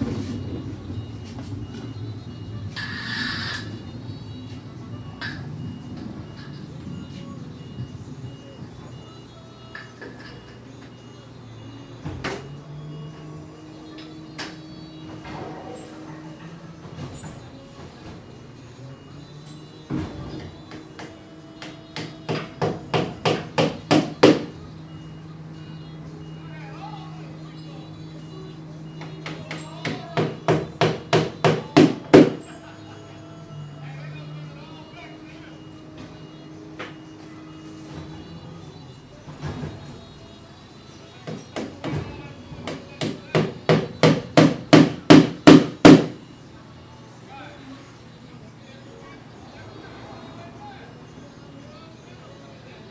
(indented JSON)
{
  "title": "Potrero Hill, San Francisco, CA, USA - world listening day 2013",
  "date": "2013-07-18 10:30:00",
  "description": "my contribution to the world listening day 2013",
  "latitude": "37.76",
  "longitude": "-122.40",
  "altitude": "85",
  "timezone": "America/Los_Angeles"
}